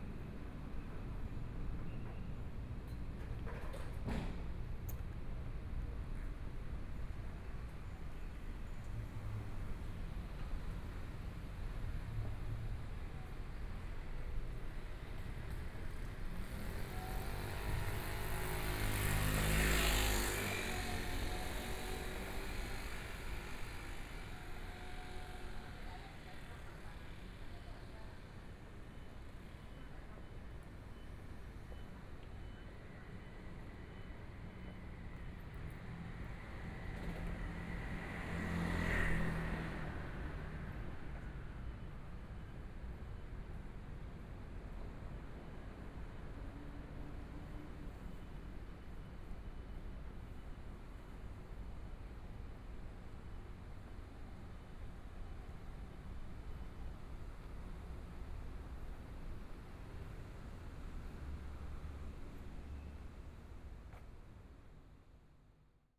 聚盛里, Zhongshan District - small streets
walking in the small streets, Environmental sounds, Traffic Sound, Binaural recordings, Zoom H4n+ Soundman OKM II